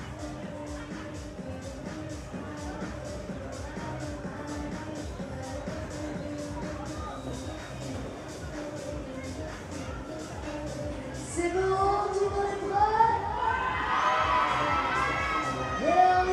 Rue du Huitième de Ligne, Saint-Omer, France - St-Omer - Ducasse - fête foraine
St-Omer (Pas-de-Calais)
Ducasse - fête foraine
ambiance - extrait 2 - fin d'après-midi
Fostex FR2 + AudioTechnica BP425